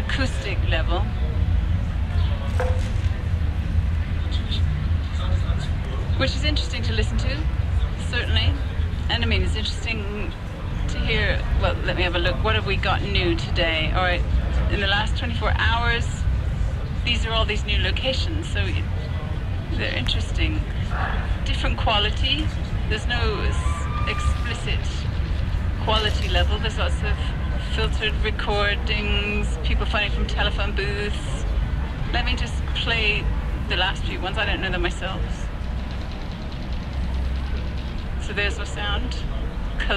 udo noll talks at Tuned City - Udo Noll talks at Tuned City
15 July, 15:57